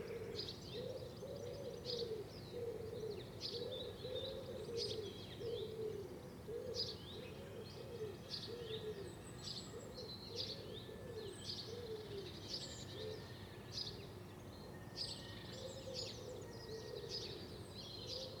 Contención Island Day 76 outer north - Walking to the sounds of Contención Island Day 76 Sunday March 21st
The Poplars High Street St Nicholas Avenue
The dawn slowly lightens
grass and detritus
saturday night revelry
To a quieting of sparrow cheep
magpie crows gulls
distant blackbird’s song
Wood pigeon’s
undulating flight
with one early-spring wing clap